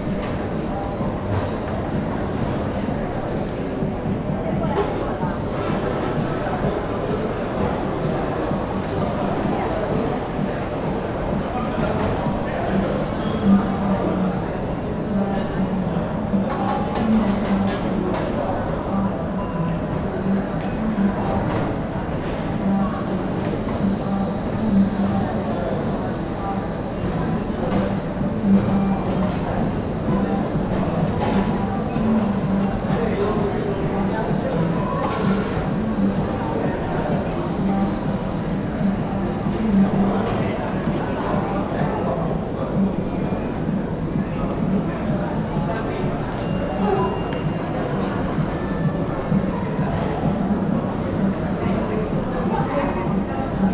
neoscenes: Central Station food court